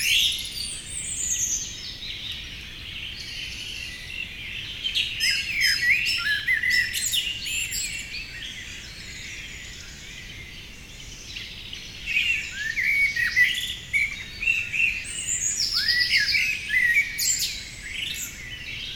Montagnole, France - An hour with blackbirds waking up
There's nothing more magical than the first bird shout on the morning. In the middle of the night, forest is a wide silent. Slowly dawn arrives, a distant hubbub is heard and the first shout emerges from the forest. Birds intensely sing in the morning in order to celebrate the fact of having survived the night (for this reason blackbirds make many shrill screams during nightfall because of anxiety). A quiet morning allows birds to reaffirm their territory possession, shouting clearly to the others. In this remote path in the forest of Montagnole (Savoy, France), I was immediately seduced by these woods immensely filled with blackbirds shouts. This is why I recorded them rising from 4:30 in the morning to later. Unfortunately the places is drowned in a constant flood of planes vomit sounds, but I had no choice. Early and temporarily exempt by this misery, I can give this recording, awakening with blackbirds.
0:48 - The first shout of the morning.
4:00 - Unleashed dogs.
7 June, 04:40